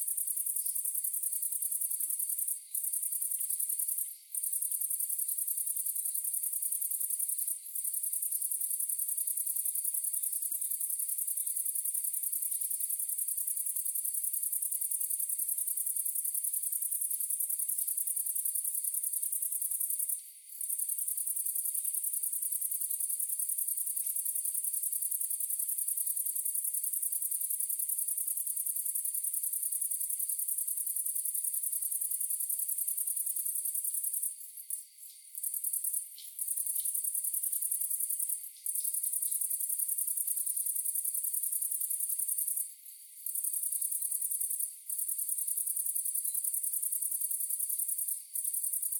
Dekerta, Kraków, Poland - (834 AB) Night crickets only
Processed excerpt with a sound of a night crickets only.
AB stereo recording (17cm) made with Sennheiser MKH 8020 on Sound Devices Mix-Pre6 II.
województwo małopolskie, Polska, 30 July 2021, ~01:00